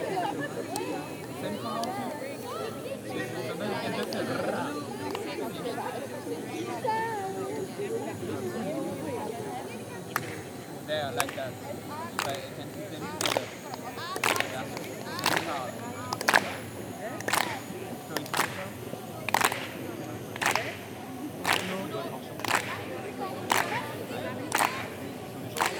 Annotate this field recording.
Into a quiet park, girl scouts playing and a drone filming her.